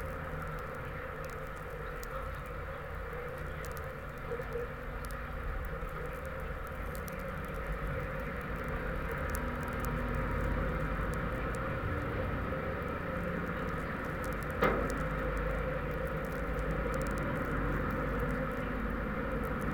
my usual tune-ing into a object. this time it is some kind big metallic pipe under the bridge. aural exploring with contact mics and electromagnetic field antenna